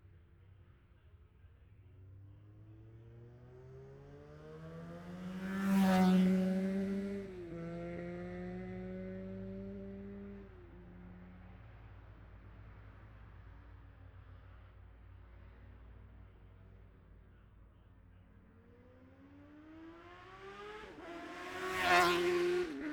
Jacksons Ln, Scarborough, UK - olivers mount road racing 2021 ...
bob smith spring cup ... ultra-lightweights qualifying ... luhd pm-01 to zoom h5 ...